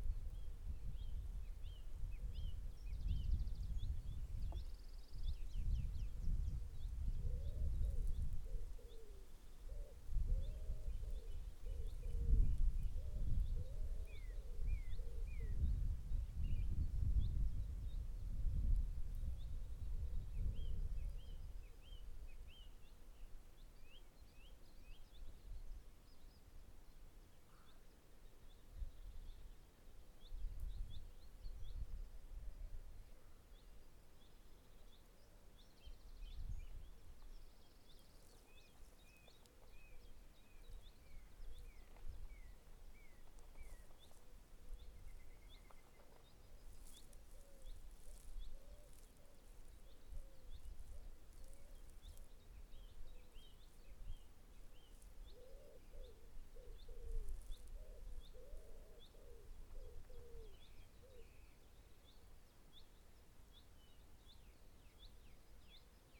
Kelling Heath, Unnamed Road, Holt, UK - Kelling Heath by Ali Houiellebecq
Listening to birds - mainly wood pigeons - in the June sunshine during Lockdown in North Norfolk in the UK. Recording made by sound artist Ali Houiellebecq.